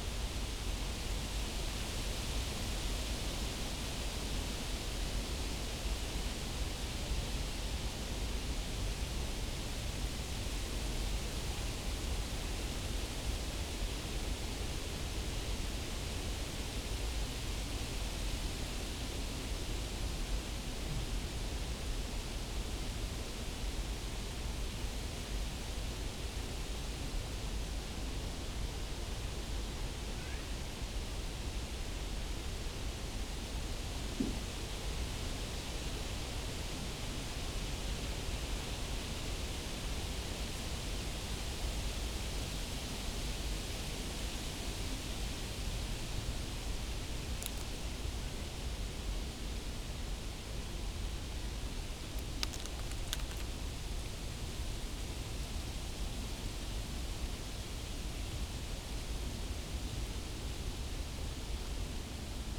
just sitting behind a little house, on the floor, under a tree, listening to the wind and a few leaves falling down
(SD702, SL502ORTF)